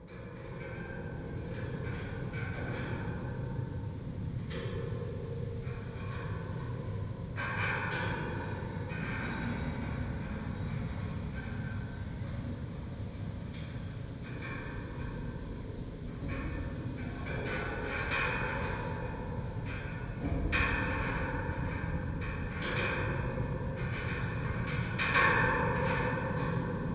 construction fence in the wind, Vienna
contact mics on a construction fence
Vienna, Austria, 10 August, ~12:00